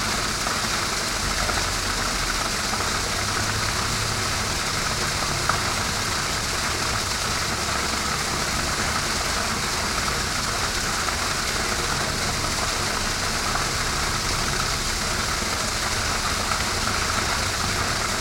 Pristaniška ulica, Maribor, Slovenia - sewer drain manhole cover
a manhole cover on the steep hill leading down pristaniška ulica to the river.